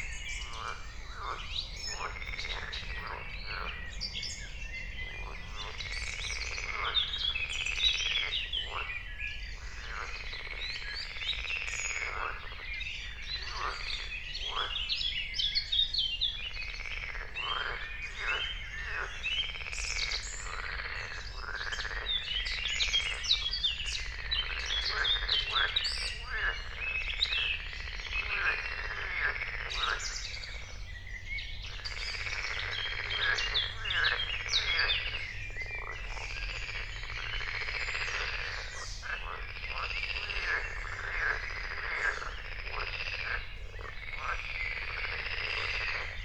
04:00 Berlin, Königsheide, Teich - pond ambience